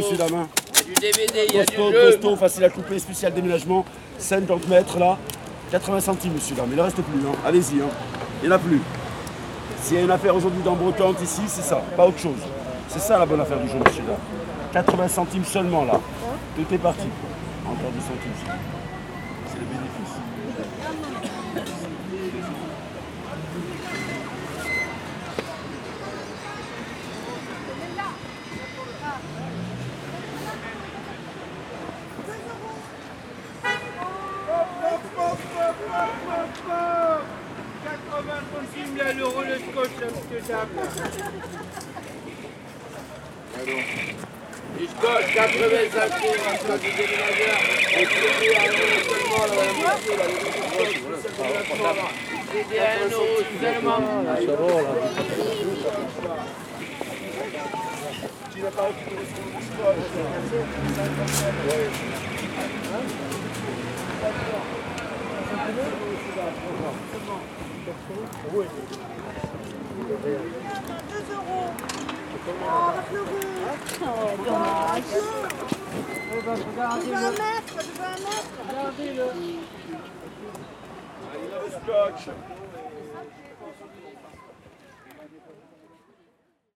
Avenue de Flandre, Paris, France - Flea market sellers [Avenue de Flandre]
Vide grenier brocante Avenue de Flandre.traveling.Pas cher.2 Euros les cds.
voix des vendeurs.Ambiance de rue.Traveling.
Walking across a Flea market Avenue de Flandre.Sellers'voices.street ambiance.Traveling.
/Binaural recording using roland cs10-em pluged into zoom h4n
19 April 2014, 13:21